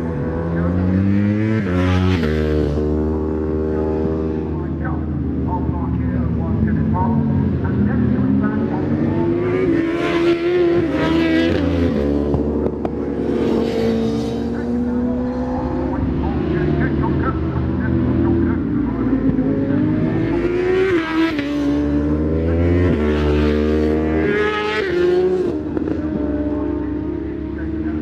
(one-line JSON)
{"title": "Stapleton Ln, Leicester, UK - British Superbikes 2004 ... Qualifying ...", "date": "2004-07-17 10:50:00", "description": "British Superbikes 2004 ... Qualifying ... part one ... Edwina's ... one point stereo mic to minidisk ...", "latitude": "52.60", "longitude": "-1.34", "altitude": "107", "timezone": "GMT+1"}